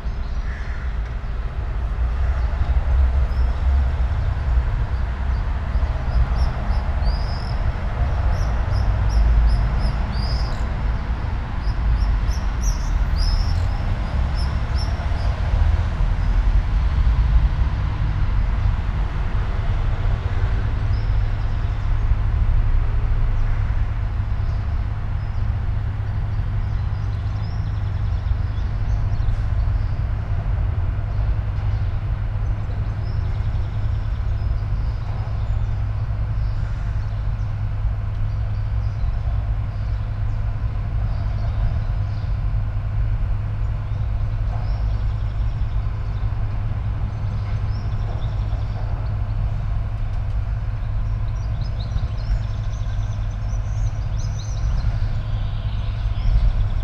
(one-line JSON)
{"title": "all the mornings of the ... - jul 9 2013 tuesday 07:03", "date": "2013-07-09 07:03:00", "latitude": "46.56", "longitude": "15.65", "altitude": "285", "timezone": "Europe/Ljubljana"}